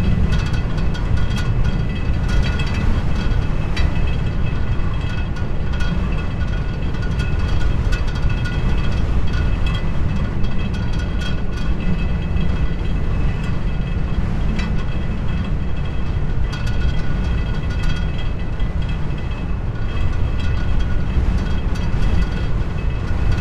afsluitdijk: parking - the city, the country & me: vibrating fence
stormy weather, vibrating fence
the city, the country & me: july 30, 2015
Breezanddijk, The Netherlands, 30 July, ~14:00